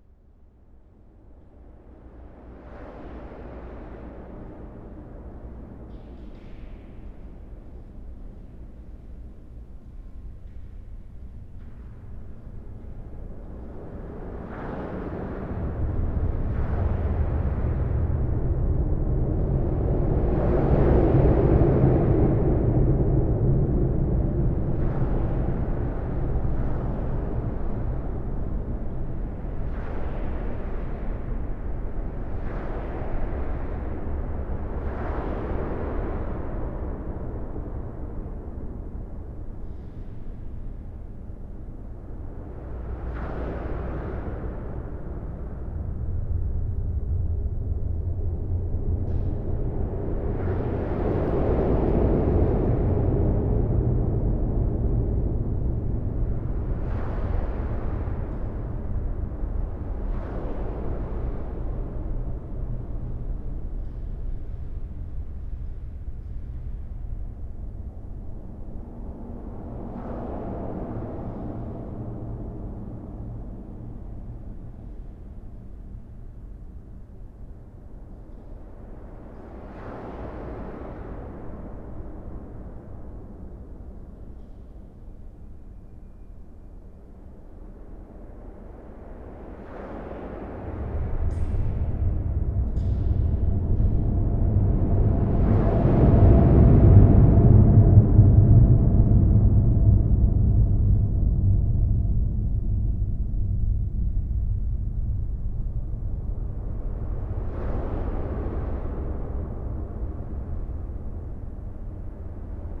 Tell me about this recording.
Inside the concrete viaduct overlooking the town of Hayange. The box-girder bridge looks like a large sloping tunnel, in which the noise of the truck is reflected.